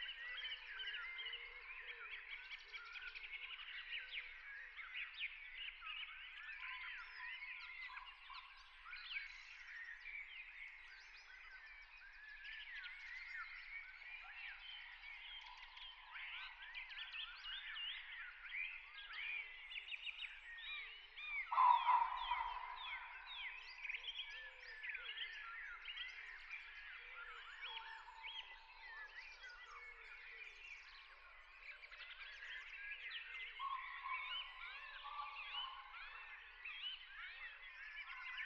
Warburg Nature Reserve, Henley-on-Thames, UK - Before the Dawn Chorus and beyond. Part 1
I made a similar recording in the same spot a year ago and wanted to compare the two bearing in mind the C19 lockdown. There are hardly any planes and the roads are a lot quieter. Sony M10